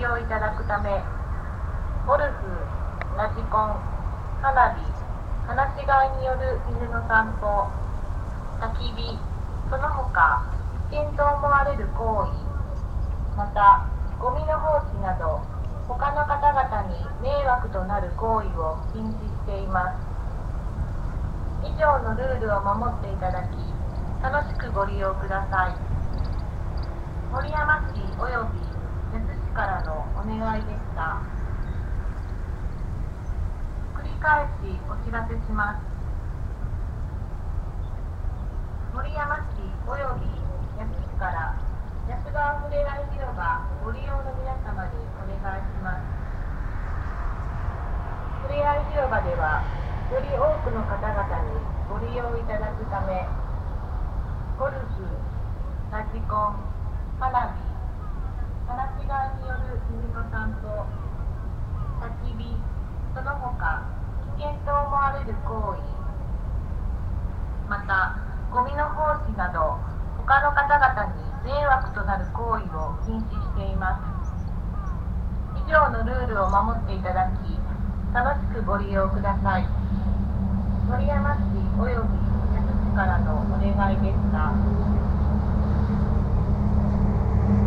Yasu, Shiga Prefecture, Japan - Yasugawa Riverside Park
Traffic rumble, crows, kids playing in the distance, and an hourly public address message that asks people to obey park rules: no golf, RC cars or aircraft, fireworks, unleashed dogs, fires, littering, or other activities that may disturb people. The same recorded message dominates the sonic environment of the park (Japanese name: 野洲川立入河川公園).